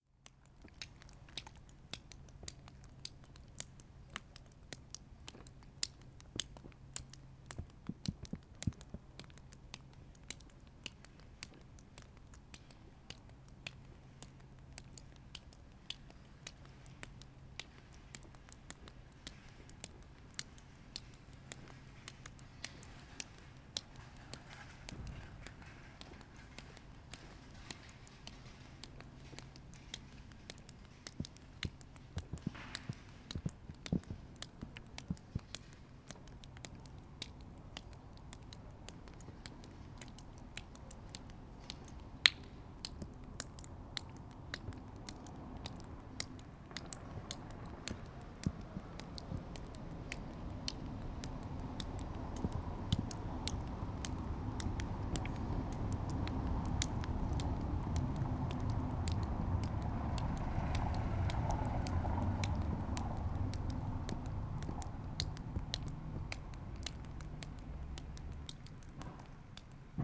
berlin, friedelstraße: vor trödler - the city, the country & me: in front of a second hand shop
rainwater dripping from the roof; some drops hitting my deadcat (what i had not realized)
the city, the country & me: april 25, 2012
99 facets of rain
Berlin, Germany, 25 April